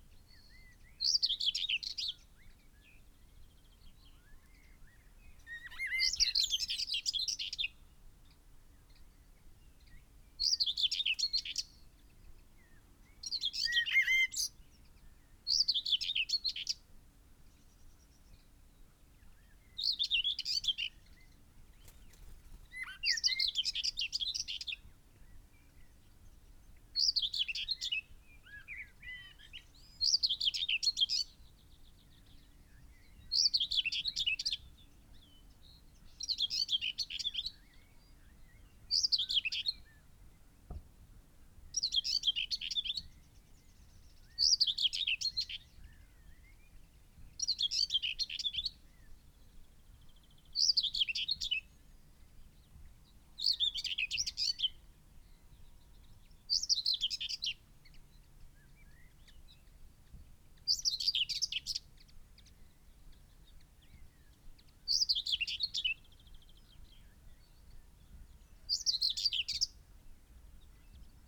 Malton, UK - whitethroat song soundscape ...
whitethroat song soundscape ... dpa 4060s clipped to bag to zoom h5 ... bird calls ... song from ... chaffinch ... linnet ... blackbird ... dunnock ... skylark ... pheasant ... yellowhammer ... whitethroat flight song ... bird often visits song posts at distance ...
England, United Kingdom, May 2022